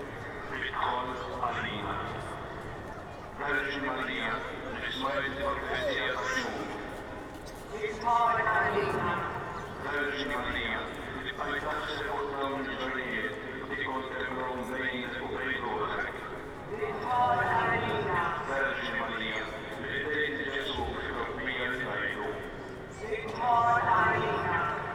The devotion towards the Passion of Jesus Christ has strong roots in Malta. When the Knights of St. John came to the Maltese Islands in 1530, they brought with them relics of the Passion, which helped to foster this devotion among the people.
Street procession, prayer amplified from inside the church, footsteps
(SD702, DPA4060)